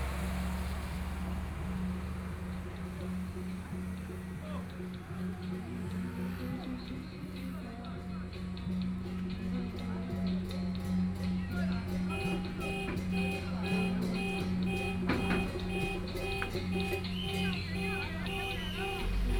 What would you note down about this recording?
Funeral, Traffic Sound, At the roadside, Sony PCM D50+ Soundman OKM II